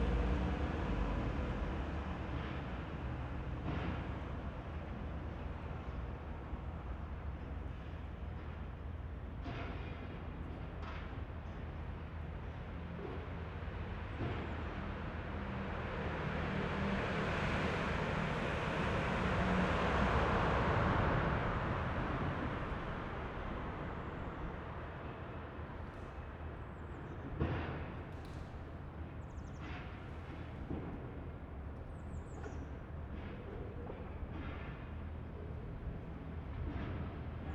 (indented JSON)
{
  "title": "Kiehlufer, Neukölln, Berlin - under bridge",
  "date": "2012-03-15 11:05:00",
  "description": "under the bridge at Kiehlufer, Berlin. sound of cars, trains, birds and the nearby scrapeyard.\n(geek note: SD702, audio technica BP4025)",
  "latitude": "52.48",
  "longitude": "13.46",
  "altitude": "31",
  "timezone": "Europe/Berlin"
}